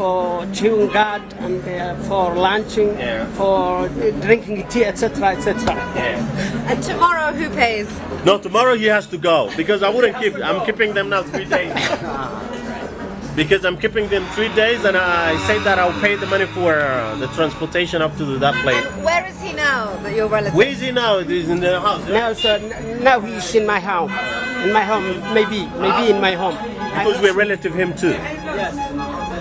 Discret, Sana'a, Yémen - Tanzanian Taxi driver in Sana'a
Tanzanian Taxi driver in Sana'a talk about the situation